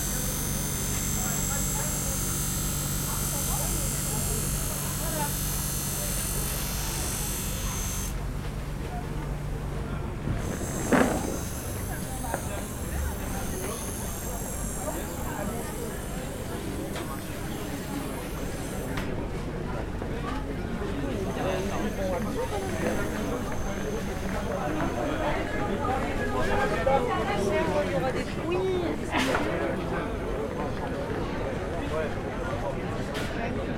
Pl. Georges Clemenceau, Aix-les-Bains, France - Le marché du mercredi
Parcours dans la halle et à l'extérieur du marché très animé actuellement approche de la camionnette du rémouleur. ZoomH4npro à la main gauche.